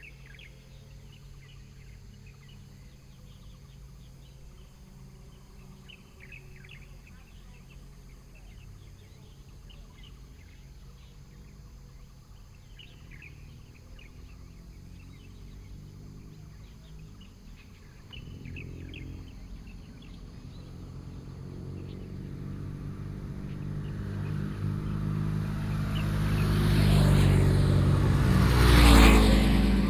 大河社區, Sanwan Township - heavy locomotives
Mountain road, There was a lot of heavy locomotives in the morning of the holidays, The sound of birds, Binaural recordings, Sony PCM D100+ Soundman OKM II